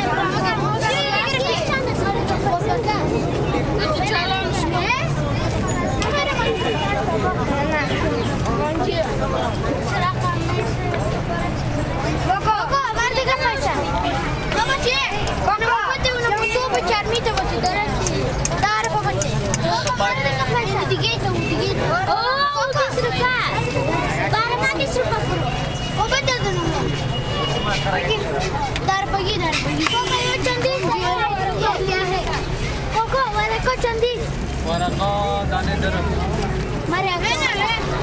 Kabul, Lycee Esteqlal, children buying sweets after school is out
Kabul, Afghanistan